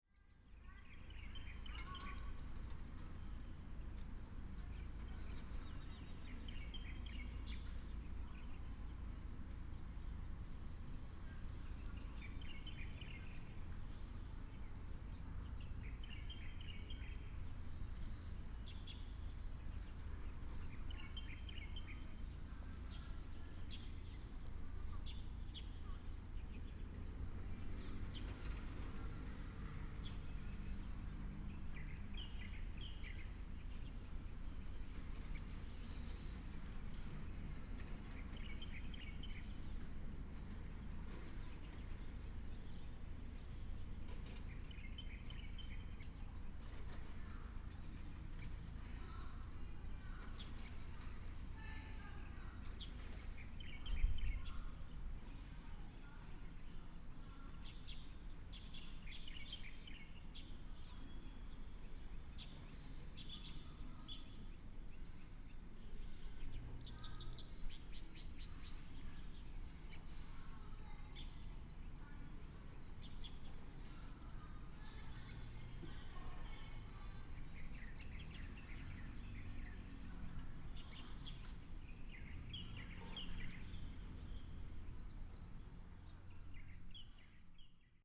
金峰鄉介達國小, 台東縣 - birds sound
birds sound, Sports field in school
Jinfeng Township, 東64鄉道, 14 March 2018, ~15:00